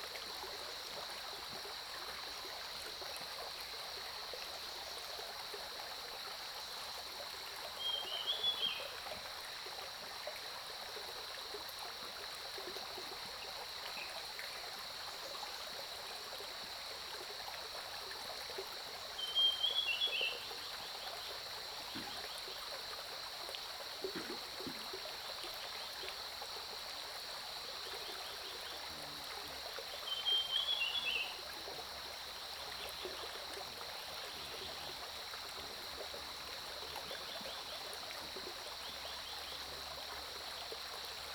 中路坑溪, 埔里鎮桃米里 - Bird calls and Stream
Early morning, Bird calls, Brook
Zoom H2n MS+XY